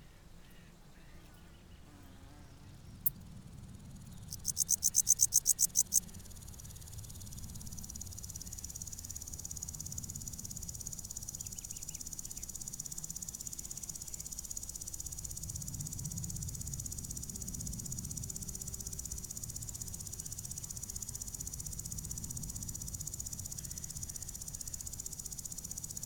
I placed the microphones in low bushes, 30 cm. Altitude 1548 m.
Lom Uši Pro, MixPreII
Slovenija, 2 July 2022